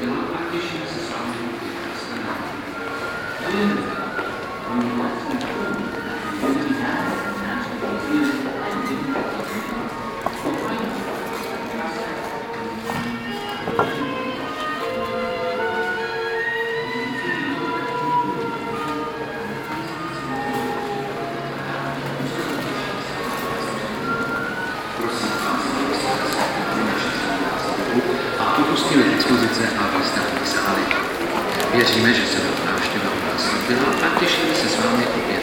National Museum, last visit
last day of the exhibitions in the old building of the national museum before 5 years of closing due to the general reconstruction